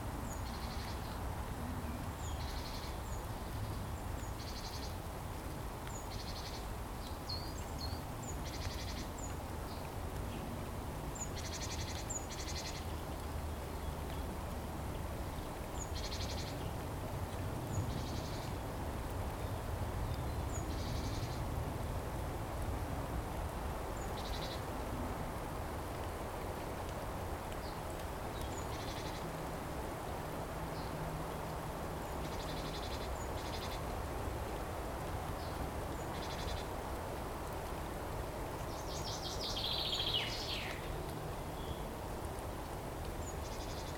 Malá Hraštice, Malá Hraštice, Czechia - Forest ant hill
The buzzing sound of a busy spring anthill. Birds singing.
Recorded with Zoom H2n, 2CH stereo, deadcat.